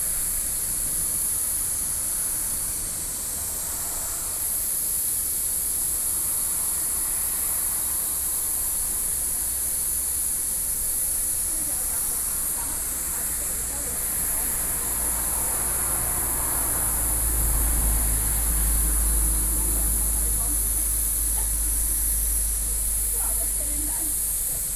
Yangjin Highway, 陽明山國家公園 - In the gazebo next to the road
National park entrance, In the gazebo next to the road, Insects sounds, Traffic Sound
Sony PCM D50+ Soundman OKM II
New Taipei City, Taiwan